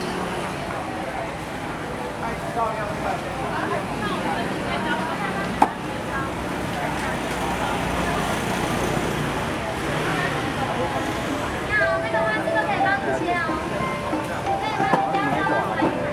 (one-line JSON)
{"title": "Ln., Jinhe Rd., Zhonghe Dist., New Taipei City - Walking in the traditional market", "date": "2012-02-14 16:45:00", "description": "Walking in the traditional market\nSony Hi-MD MZ-RH1+Sony ECM-MS907", "latitude": "25.00", "longitude": "121.49", "altitude": "19", "timezone": "Asia/Taipei"}